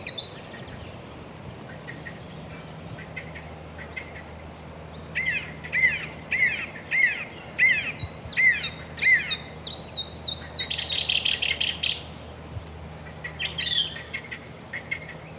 Chemin des Ronferons, Merville-Franceville-Plage, France - Different birds
Différents birds during the Covid-19 pandemic, Zoom H6 & Rode NTG4+